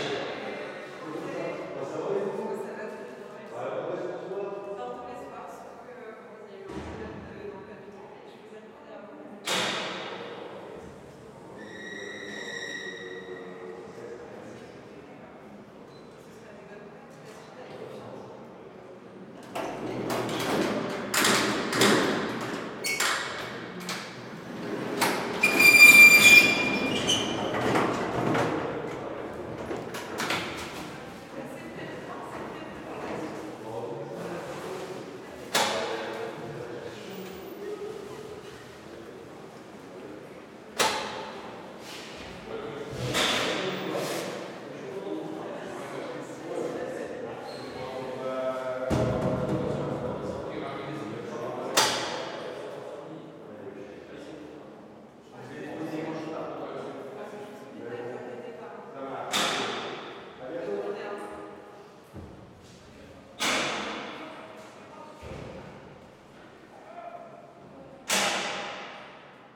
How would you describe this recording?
Longuenesse - Pas-de-Calais, Centre de Détention, Ambiance